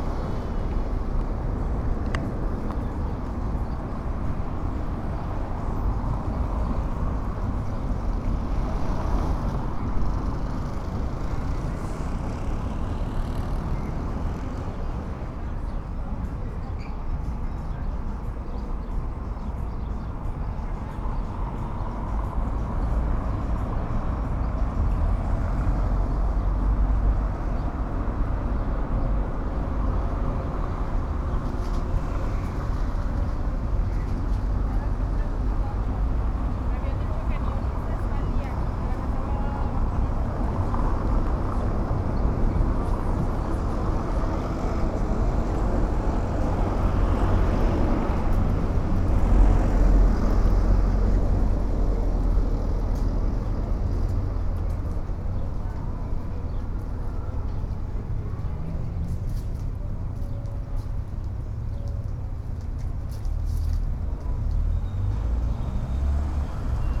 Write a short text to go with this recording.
Expiatorio Plaza during the COVID-19 quarantine on the first day of phase 3. This is a plaza where there is normally a lot of flow of people going by, but now there are very few people due to the quarantine that is lived at this time by the pandemic. (I stopped to record while going for some medicine.) I made this recording on April 21st, 2020, at 2:26 p.m. I used a Tascam DR-05X with its built-in microphones and a Tascam WS-11 windshield. Original Recording: Type: Stereo, Esta es una plaza donde normalmente hay mucho flujo de gente pasando, pero ahora hay muy pocas personas debido a la cuarentena que se vive en este tiempo por la pandemia. (Me detuve a grabar al ir por unas medicinas.) Esta grabación la hice el 21 de abril 2020 a las 14:26 horas.